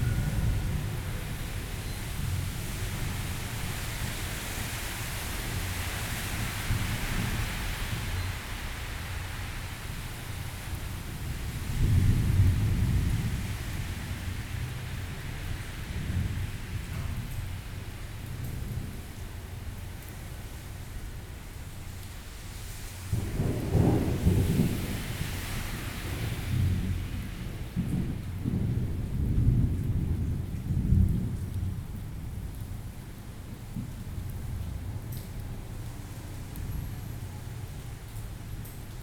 tamtamART.Taipei - Thunderstorm
Thunderstorm, Indoor, Near the main door, Microphone placed on the ground, Sony PCM D50 + Soundman OKM II